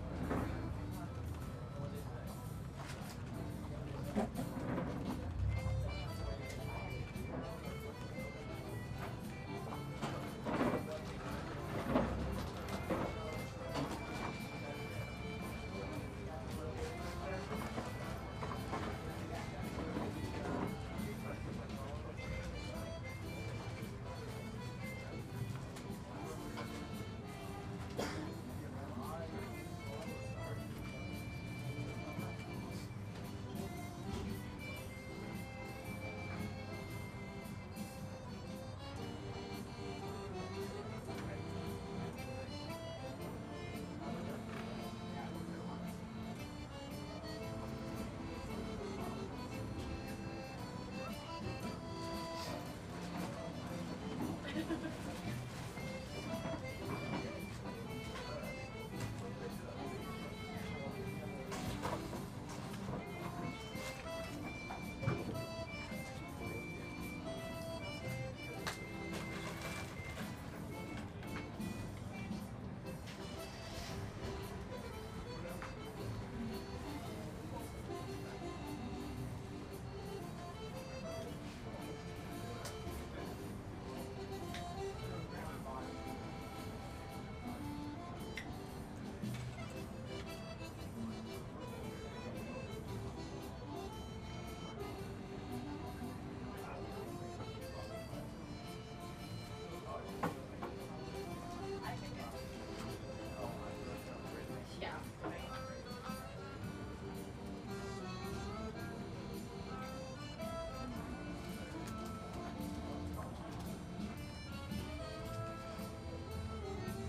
Genova delicatessen - Italian deli, Oakland Rockridge district
Genova delicatessen - Italian deli, Oakland, Rockridge district
CA, USA, 16 November 2010, ~03:00